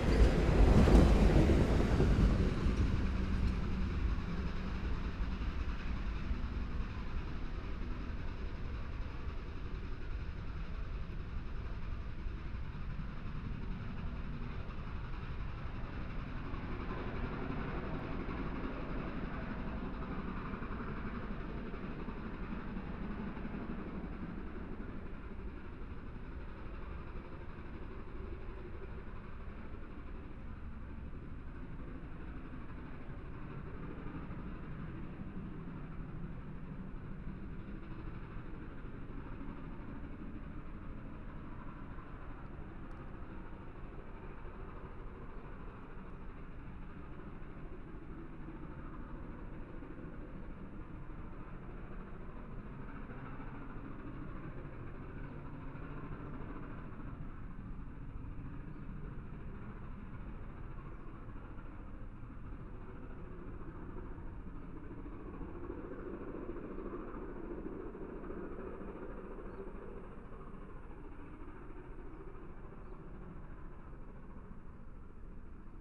{
  "title": "Krugersdorp, South Africa - Steam Locomotive",
  "date": "2013-02-02 09:25:00",
  "description": "A steam locomotive pulling passenger coaches on a day outing to the Magaliesberg. Behringer B5 with Omni capsules on a Jecklin Disc to SD702",
  "latitude": "-26.07",
  "longitude": "27.63",
  "altitude": "1581",
  "timezone": "Africa/Johannesburg"
}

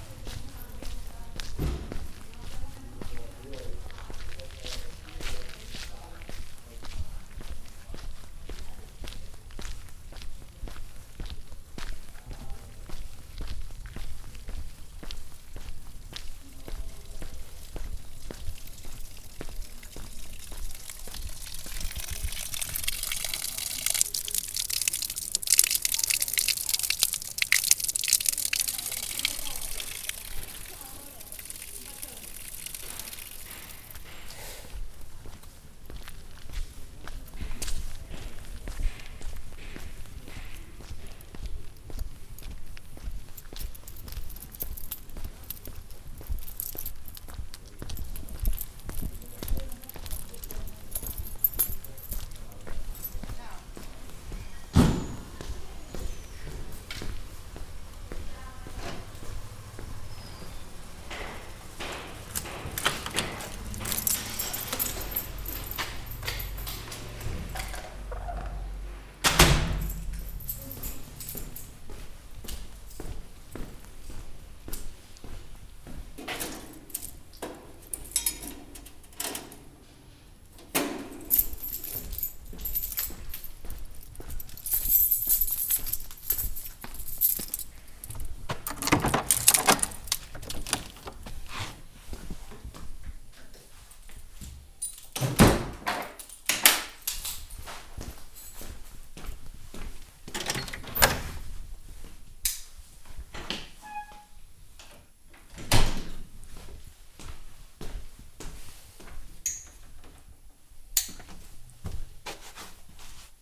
{
  "title": "Biella BI, Italia - Biella Piazzo 1",
  "date": "2014-02-18 11:50:00",
  "description": "short walk from my studio to piazza cisterna, then piazza cucco, back to piazza cisterna and back to the studio. Zoomq3hd",
  "latitude": "45.57",
  "longitude": "8.05",
  "altitude": "480",
  "timezone": "Europe/Rome"
}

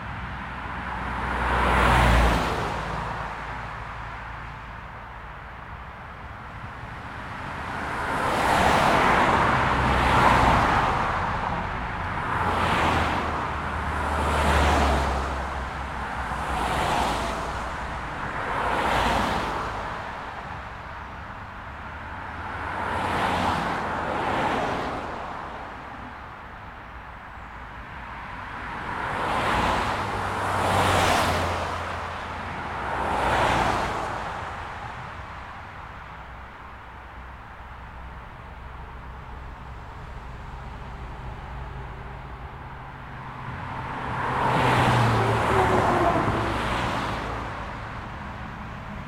{
  "title": "JCJJ+FP Newton Abbot, UK - Haldon Forest wildlife crossing point",
  "date": "2022-05-17 12:53:00",
  "description": "This recording was made using a Zoom H4N. The recorder was positioned next to the northbound carriageway of the A38. This is the point at which deer currently try and cross the A38 to get to the other side of Haldon Forest. There was a continuous flow of traffic at this time of day making crossing impossible. Road casualty deer are often seen at this point. This area has been identified as a good point for a potential green bridge for safe wildlife passage. Bizarrely dormice have been found living in the central reservation.This recording is part of a series of recordings that will be taken across the landscape, Devon Wildland, to highlight the soundscape that wildlife experience and highlight any potential soundscape barriers that may effect connectivity for wildlife.",
  "latitude": "50.63",
  "longitude": "-3.57",
  "altitude": "193",
  "timezone": "Europe/London"
}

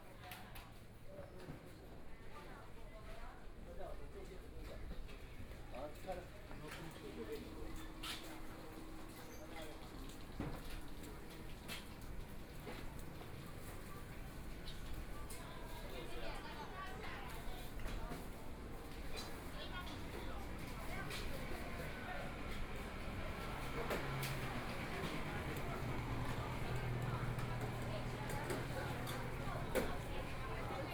{
  "title": "台北市中山區朱馥里 - Walking through the market",
  "date": "2014-02-08 13:52:00",
  "description": "Walking through the market, Traffic Sound, Motorcycle Sound, Pedestrians on the road, Binaural recordings, Zoom H4n+ Soundman OKM II",
  "latitude": "25.06",
  "longitude": "121.54",
  "timezone": "Asia/Taipei"
}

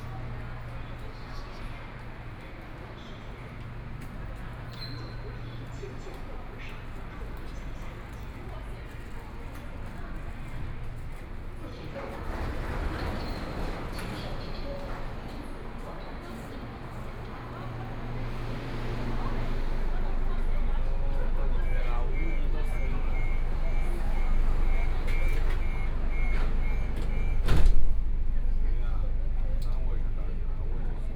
{
  "title": "Hongkou District, Shanghai - Line 8(Shanghai metro)",
  "date": "2013-11-23 11:47:00",
  "description": "from Siping Road station To Hongkou Football Stadium station, Binaural recording, Zoom H6+ Soundman OKM II",
  "latitude": "31.28",
  "longitude": "121.49",
  "altitude": "10",
  "timezone": "Asia/Shanghai"
}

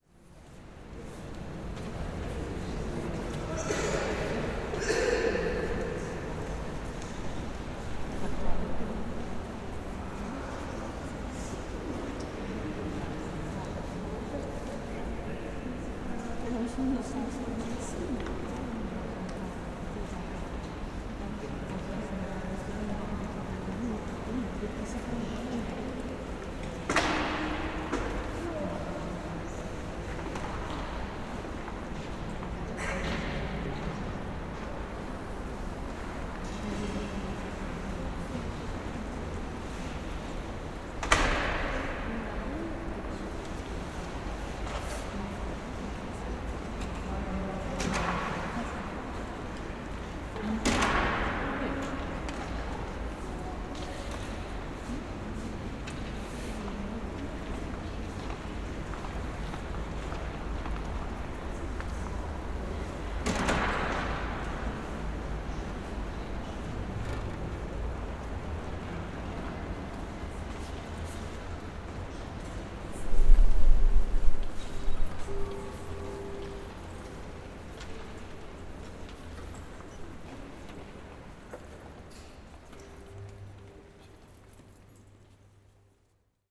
{
  "title": "Vasastan, Norrmalm, Stockholm, Suecia - Stockholms Stadsbibliotek, inside",
  "date": "2016-08-13 18:36:00",
  "description": "So de l'interior de la espectacular biblioteca pública de Stockholm.\nThis is the inside of the spectacular public library in Stockholm.\nAsí suena el interior de la espectacular biblioteca pública de Estocolmo.",
  "latitude": "59.34",
  "longitude": "18.05",
  "altitude": "28",
  "timezone": "Europe/Stockholm"
}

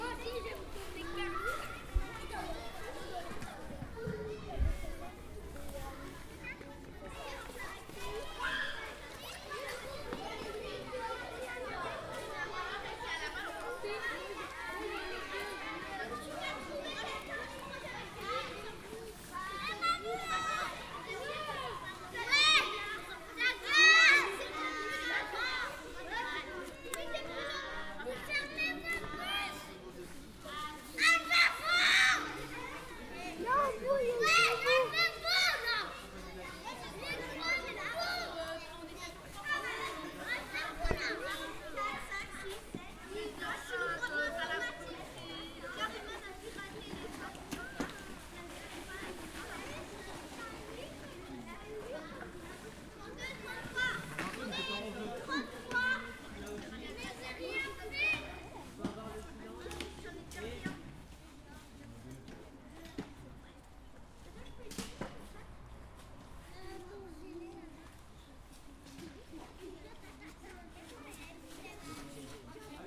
{
  "title": "Schiltigheim, France - The schoolyard",
  "date": "2016-11-03 15:28:00",
  "description": "During the fall, schoolyard with children screaming.",
  "latitude": "48.60",
  "longitude": "7.74",
  "altitude": "142",
  "timezone": "Europe/Paris"
}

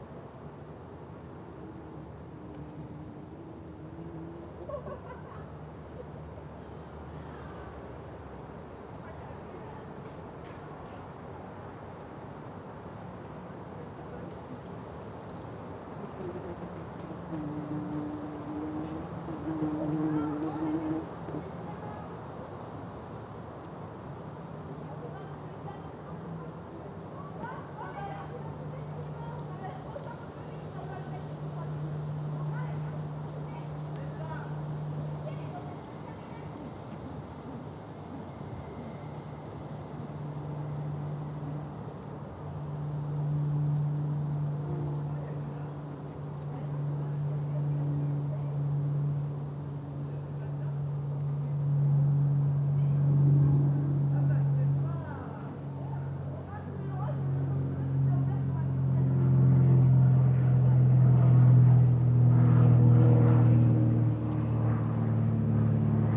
{
  "title": "Oxford Brookes University - Headington Campus, Headington Campus, Headington Hill, Oxford, Oxfordshi - Mining bees going in and out of their burrow",
  "date": "2013-07-10 16:03:00",
  "description": "Towards the end of a soundwalk that myself and a colleague were leading as part of a field recording course, our little group ran into an apiarist who had been setting up a beehive in the University grounds as part of an architectural research programme. He was very talkative about this project and I was tired, so am ashamed to say that I zoned out from what he was saying. I was sort of idly staring into space and not really listening, when I noticed that a number of lovely fat, fuzzy bees were going in and out of a tiny hole in the soil. I think they are mining bees. I watched closely for a little while while the apiarist (oblivious!) carried on talking loudly about his research. I realised there was a hole close to where the bees were moving in and out of the ground which I could poke one of my omni-directional microphones into, and so I did this, and listened closely while what seemed to be three bumble bees came and went out of their wee dwelling in the ground.",
  "latitude": "51.75",
  "longitude": "-1.23",
  "altitude": "96",
  "timezone": "Europe/London"
}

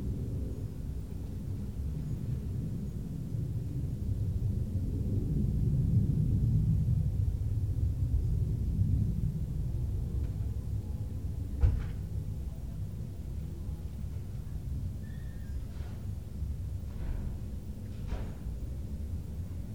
{
  "title": "California Ave SW, Seattle - 1520 Calif. Ave SW #2",
  "date": "1979-01-27 19:57:00",
  "description": "A quiet evening in West Seattle, overlooking Elliott Bay toward downtown. The sounds of human traffic are reflected off the concrete wall surrounding the parking lot beneath my deck, creating moiré patterns in sound. A multitude of sources overlap and blend in surprising ways.\nThis was my first phonographic \"field recording, \" taken off the deck of my West Seattle apartment with my then-new Nakamichi 550 portable cassette recorder. Twenty years later it became the first in a series of Anode Urban Soundscapes, when I traded in the Nak for a Sony MZ-R30 digital MiniDisc recorder and returned to being out standing in the field. The idea came directly from Luc Ferrari's \"Presque Rien\" (1970).\nMajor elements:\n* Car, truck and bus traffic\n* Prop and jet aircraft from Sea-Tac and Boeing airfields\n* Train horns from Harbor Island (1 mile east)\n* Ferry horns from the Vashon-Fauntleroy ferry (4 miles south)",
  "latitude": "47.59",
  "longitude": "-122.39",
  "altitude": "82",
  "timezone": "America/Los_Angeles"
}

